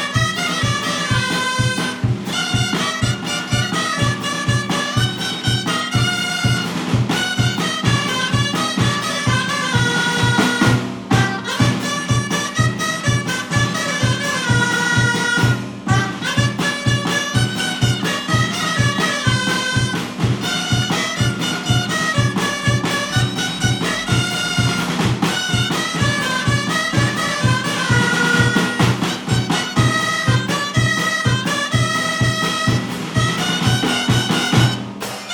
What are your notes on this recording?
Ensayo semanal de los Grallers de Sant Bartomeu.